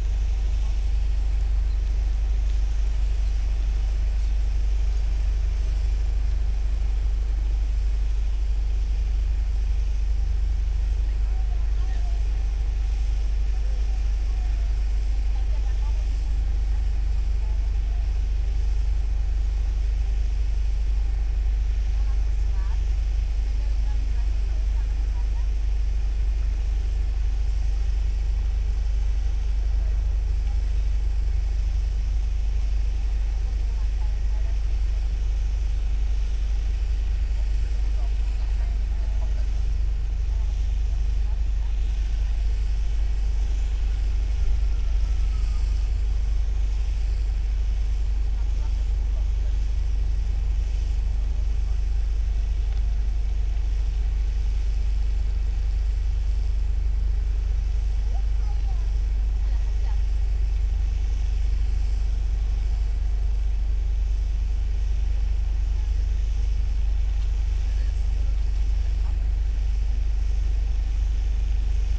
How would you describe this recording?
excavator drone, construction works for the A100 Autobahn, (SD702, DPA4060)